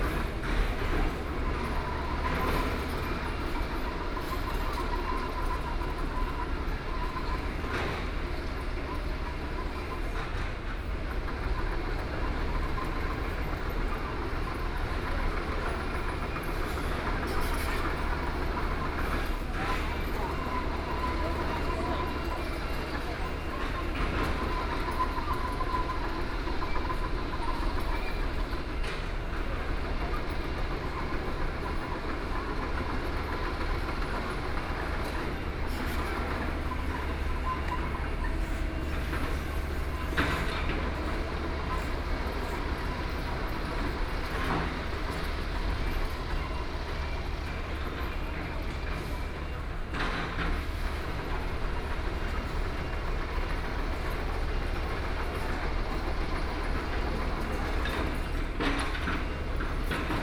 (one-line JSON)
{"title": "Taichung Station, Taichung City - In the station platform", "date": "2013-10-08 11:34:00", "description": "In the station platform, Railway Construction, Station broadcast messages, Train stops, Zoom H4n+ Soundman OKM II", "latitude": "24.14", "longitude": "120.69", "altitude": "81", "timezone": "Asia/Taipei"}